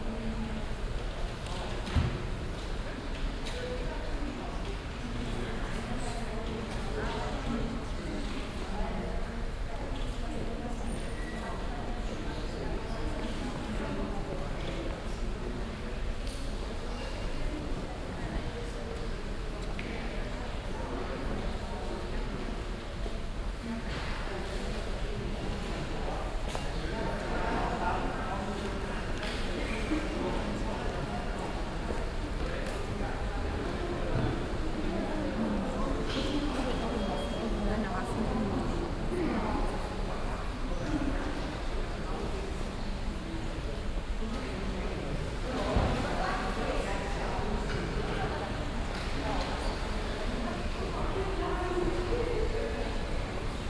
cologne, neumarkt, sparkasse hauptfiliale
morgens - gang durch foyer mit geldautomaten und auszugdruckern durch hauphalle mit beratungsschaltern und kleinem brunnen
soundmap nrw - social ambiences - sound in public spaces - in & outdoor nearfield recordings